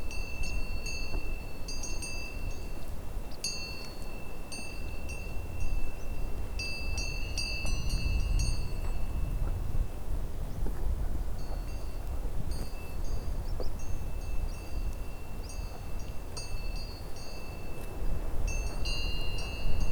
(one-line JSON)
{"title": "Unnamed Road, Chanaleilles, Frankrijk - cow bells and first snow", "date": "2015-10-07 10:53:00", "description": "October 2015, On a walk from Saugues to Le Sauvage. You can hear some wind in the recording. Top of a hill. Cows and small bells. First snow. And beside: many memories. (Recorded with ZOOM 4HN)", "latitude": "44.87", "longitude": "3.51", "altitude": "1209", "timezone": "Europe/Paris"}